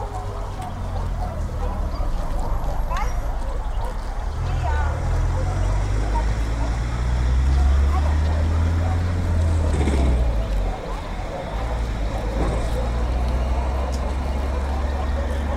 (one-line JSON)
{"title": "Varazdin, Croatia - Horses in the pasture", "date": "2016-07-28 17:00:00", "description": "Horses eating grass in the pasture. A couple of girls riding horses (and one is talking to her horse). Sunny summer afternoon.", "latitude": "46.33", "longitude": "16.34", "altitude": "170", "timezone": "Europe/Zagreb"}